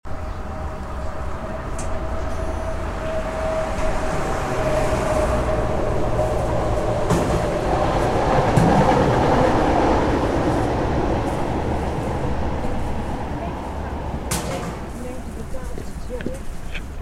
Next to tramline, Zoetermeer
Tram approaching, on soundwalk